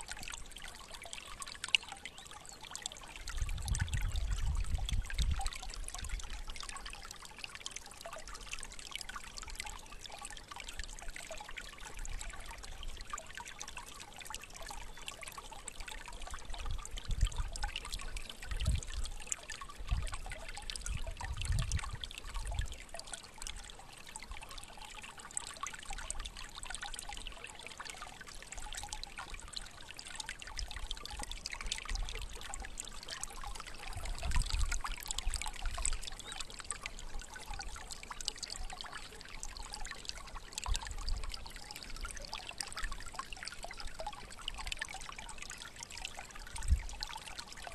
Horní Benešov, Česko - Flowing water